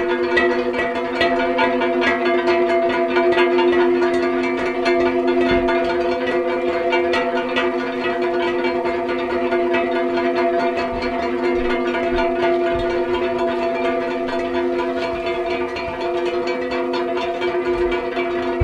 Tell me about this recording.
Stazione Topolo 1999, resonance ensemble, Italy